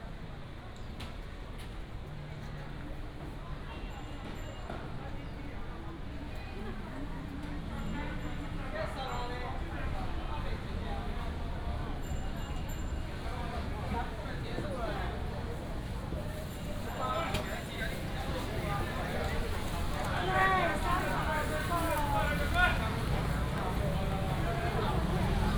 Ln., Guoqing Rd., Banqiao Dist. - Evening market
Evening market, Traffic sound, vendors peddling
Banqiao District, New Taipei City, Taiwan